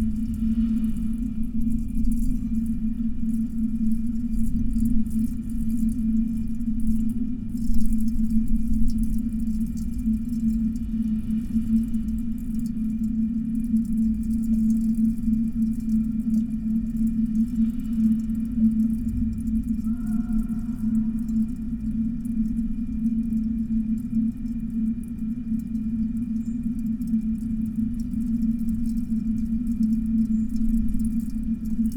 from/behind window, Mladinska, Maribor, Slovenia - glass bowl, wind, snow flakes
inside of a glass bowl, wind, snow flakes
14 March 2013, 13:26